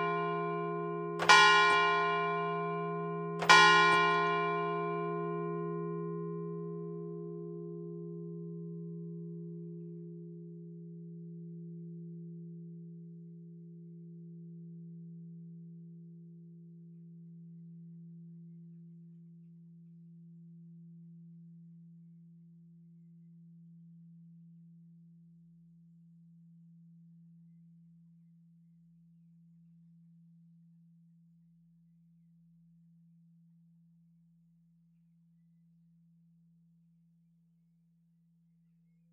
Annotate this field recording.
Neuville sous Montreuil, Clocher de la chartreuse de Neuville, 12h